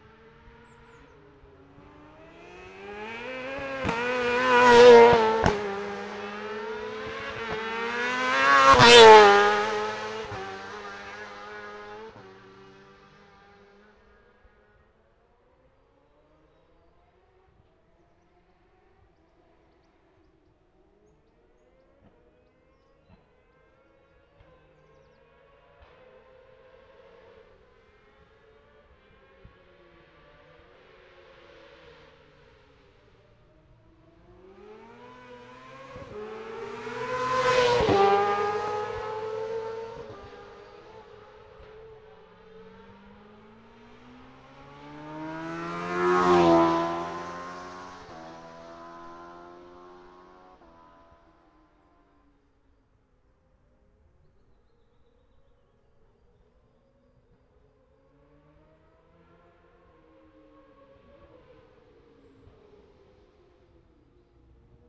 {
  "title": "Scarborough, UK - motorcycle road racing 2012 ...",
  "date": "2012-04-15 09:44:00",
  "description": "Sidecar practice ... Ian Watson Spring Cup ... Olivers Mount ... Scarborough ... binaural dummy head ... grey breezy day ...",
  "latitude": "54.27",
  "longitude": "-0.41",
  "altitude": "147",
  "timezone": "Europe/London"
}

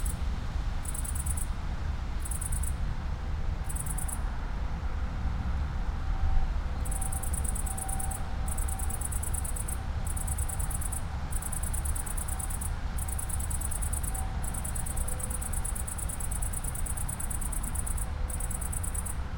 {
  "title": "poplar woods, river Drava areas, Maribor - early autumn cicada",
  "date": "2013-09-02 18:34:00",
  "description": "dry poplar leaves and branches, cicada on acacia tree",
  "latitude": "46.56",
  "longitude": "15.68",
  "altitude": "251",
  "timezone": "Europe/Ljubljana"
}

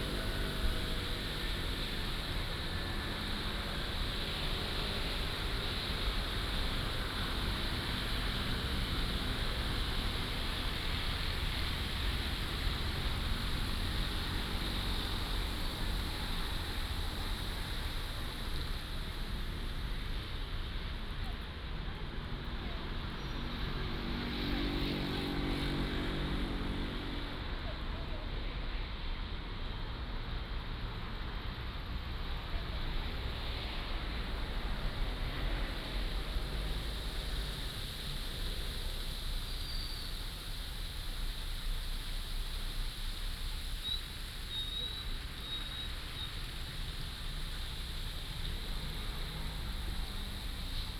{
  "title": "Sanmin Rd., Changhua City - In the Plaza",
  "date": "2017-02-15 08:24:00",
  "description": "In the Plaza, Traffic sound, fountain",
  "latitude": "24.08",
  "longitude": "120.54",
  "altitude": "24",
  "timezone": "GMT+1"
}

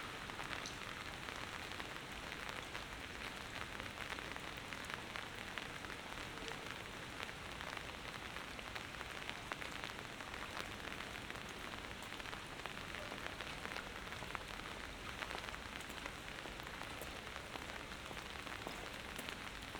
{
  "title": "Berlin: Vermessungspunkt Maybachufer / Bürknerstraße - Klangvermessung Kreuzkölln ::: 19.09.2012 ::: 02:05",
  "date": "2012-09-19 02:05:00",
  "latitude": "52.49",
  "longitude": "13.43",
  "altitude": "39",
  "timezone": "Europe/Berlin"
}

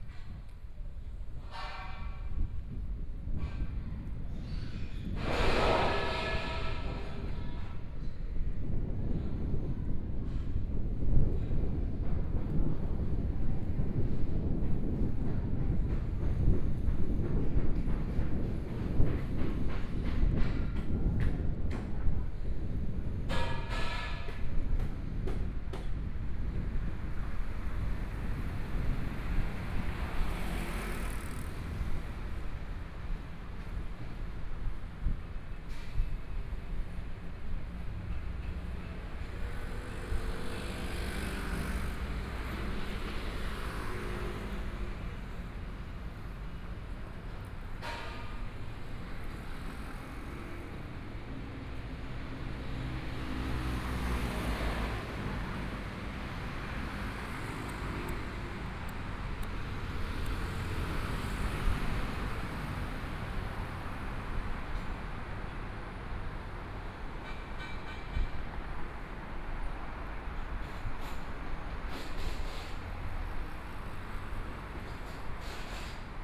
{
  "title": "Fuxing N. Rd., Taipei City - In the corner of the street",
  "date": "2014-02-08 13:32:00",
  "description": "In the corner of the street, Traffic Sound, Construction site noise, Binaural recordings, Zoom H4n+ Soundman OKM II",
  "latitude": "25.06",
  "longitude": "121.54",
  "timezone": "Asia/Taipei"
}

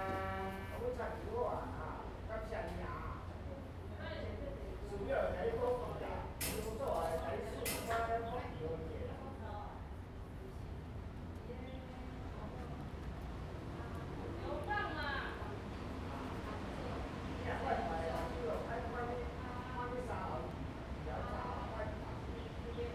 {
  "title": "靈霄寶殿, Hsiao Liouciou Island - In the temple",
  "date": "2014-11-01 10:24:00",
  "description": "In the temple, Vessels siren in the distance\nZoom H2n MS +XY",
  "latitude": "22.35",
  "longitude": "120.38",
  "altitude": "13",
  "timezone": "Asia/Taipei"
}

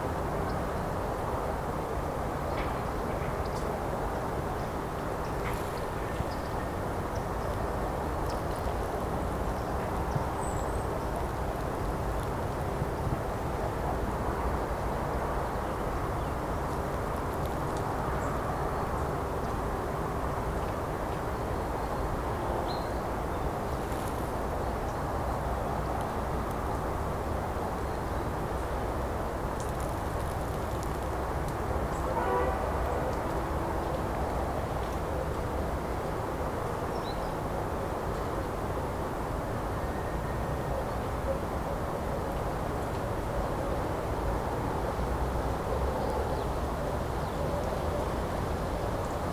{
  "title": "вулиця Шмідта, Костянтинівка, Донецька область, Украина - Звуки производства",
  "date": "2018-10-10 08:25:00",
  "description": "Звуки остатков заводской инфраструктуры",
  "latitude": "48.52",
  "longitude": "37.69",
  "altitude": "85",
  "timezone": "GMT+1"
}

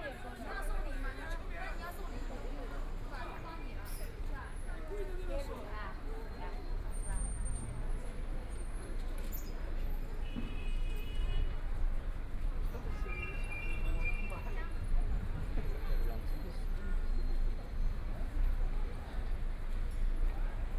{
  "title": "Lishui Road, Shanghai - walking in the Street",
  "date": "2013-11-25 15:06:00",
  "description": "walking in the Street, Shopping street sounds, The crowd, Bicycle brake sound, Traffic Sound, Binaural recording, Zoom H6+ Soundman OKM II",
  "latitude": "31.23",
  "longitude": "121.49",
  "altitude": "13",
  "timezone": "Asia/Shanghai"
}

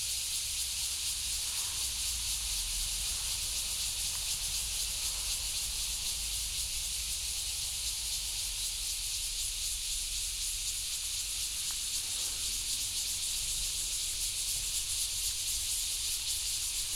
{
  "title": "瑞豐村, Luye Township - Cicadas sound",
  "date": "2014-09-07 09:39:00",
  "description": "In the woods, Cicadas sound, Traffic Sound",
  "latitude": "22.97",
  "longitude": "121.14",
  "altitude": "246",
  "timezone": "Asia/Taipei"
}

